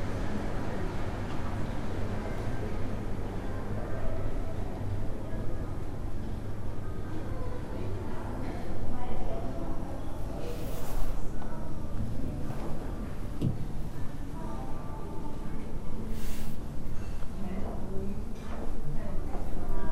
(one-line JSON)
{"title": "Empire Riverside Hotel", "date": "2009-10-31 16:00:00", "description": "Aus der Serie \"Immobilien & Verbrechen\". Gedämpfte Atmosphäre im Luxushotel: der diskrete Sound der Bourgeoisie.\nKeywords: Gentrifizierung, St. Pauli, Brauereiquartier", "latitude": "53.55", "longitude": "9.96", "altitude": "25", "timezone": "Europe/Berlin"}